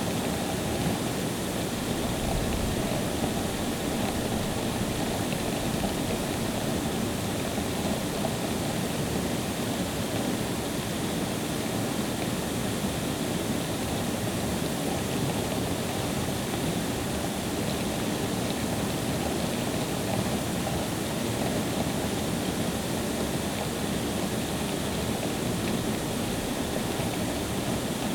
Königsheide, Südostallee, Berlin - ground water treatment plant
different part/position of the plant (using a 4m boom pole over the fence)
(Tascam DR-100 MKIII, SuperLux S502 ORTF)